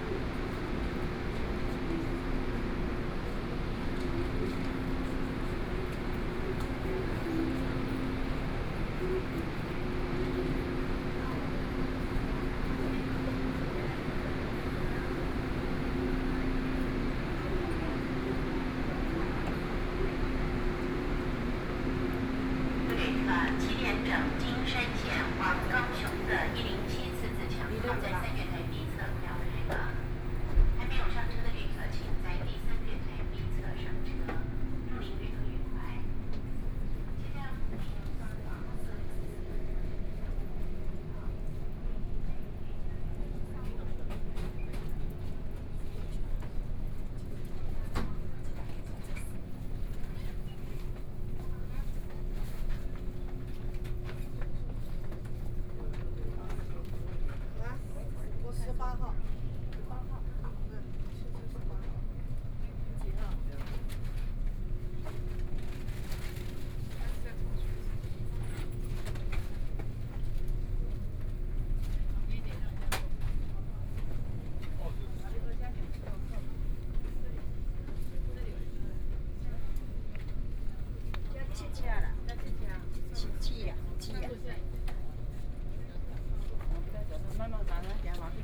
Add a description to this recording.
Toward the platform, Train passes, Train arrived, Station broadcast messages, Zoom H4n+ Soundman OKM II